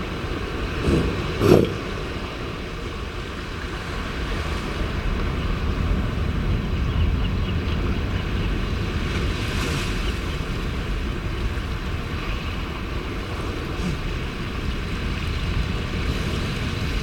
Mexico - Elephant seals ...
San Benitos Oeste Island ... Isla San Benito ... elephant seals mothers and pups loafing on a rocky outcrop ... breaks and handling noises ... Telinga ProDAT 5 to Sony Minidisk ... sunny warm clear morning ... peregrine calls at end ...